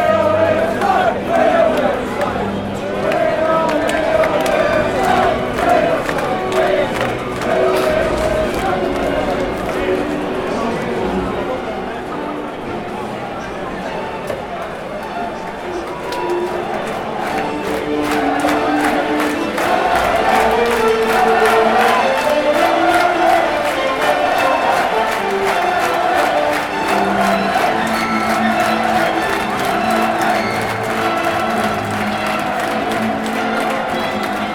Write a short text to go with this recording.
A short recording of a Saturday afternoon home game at Sunderland Football Club. Various locations in and around the football stadium were used to create this final mix.